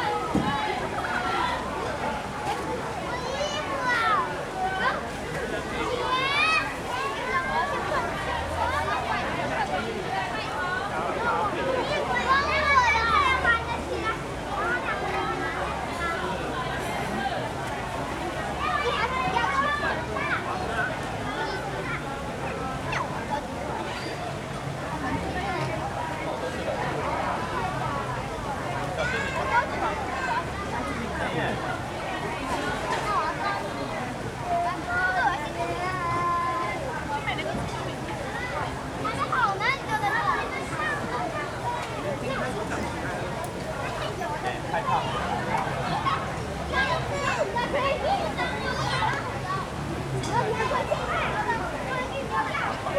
Ln., Dayong St., Zhonghe Dist., New Taipei City - Evening market
Traditional market and children's playground
Sony Hi-MD MZ-RH1 +Sony ECM-MS907
New Taipei City, Taiwan, 21 January 2012, ~17:00